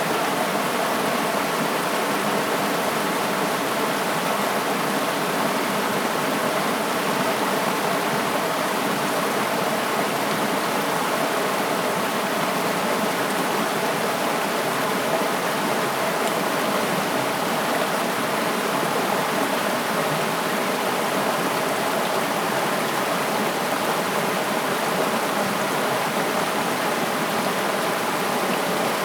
TaoMi River, 水上, 埔里鎮桃米里 - the river
The sound of the river
Zoom H2n MS+XY +Spatial audio